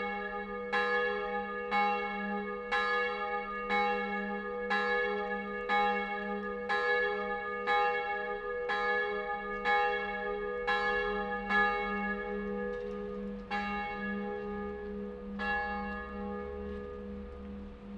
Favoriten, Wien, Österreich - noon chime
chimes at noon, ambience noise, dogs, birds, traffic. recorded in 6th floor, recorder pointing to the church. - recorded with a zoom Q3
Österreich, European Union